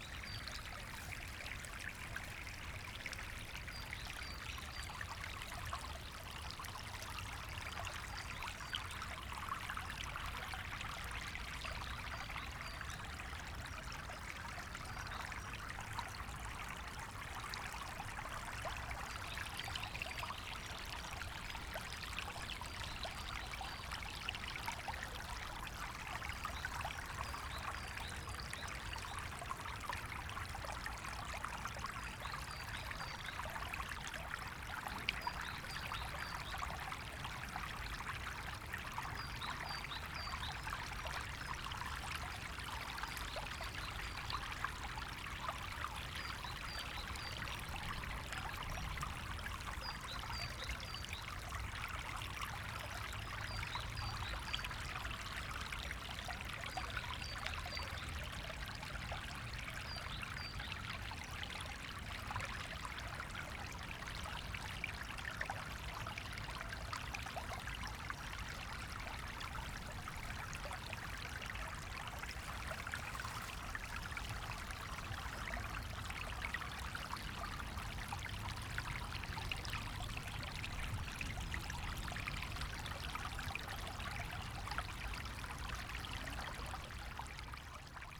Ahrensfelder Berge, Deutschland - river Wuhle flow
river Wuhle near Ahrensfelder Berge
(SD702, SL502 ORTF)